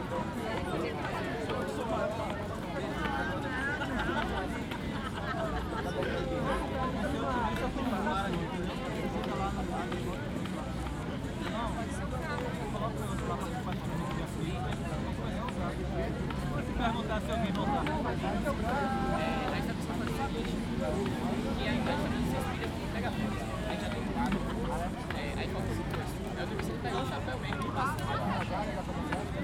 Salvador, Bahia, Brazil - Marijuana March Ambience
The ambience before a legalise marijuana march, in Salvador, Brazil.